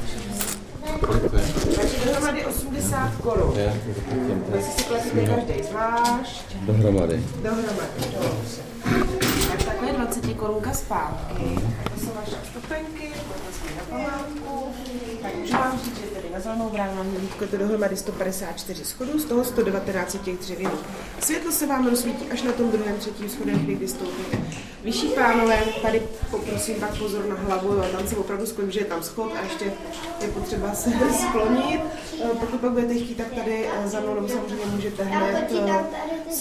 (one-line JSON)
{"title": "zelena brana, pardubice", "description": "climbing up the tower, sound workshop", "latitude": "50.04", "longitude": "15.78", "altitude": "226", "timezone": "Europe/Berlin"}